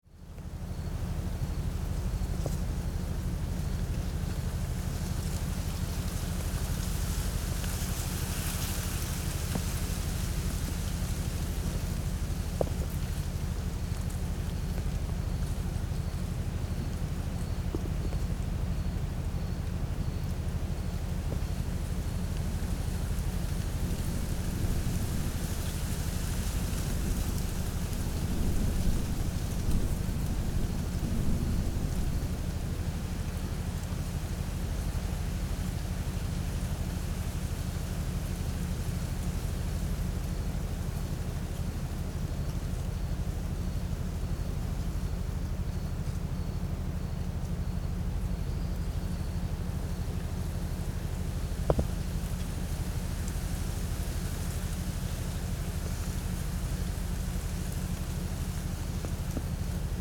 stromboli, ginostra, solar power station - autunm evening
autunm evening, ginostra, stromboli. hum of a solar power station, wind.